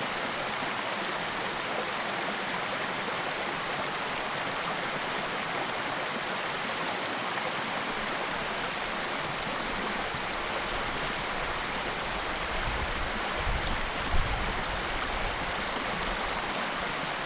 West Dart River, Wistman's Wood
Recorded in 2012, this is the sound of water flowing along the West Dart River, just west of Wistman's Wood in Devon. Mostly the sound of water but also insects. Recorded on a Zoom H2n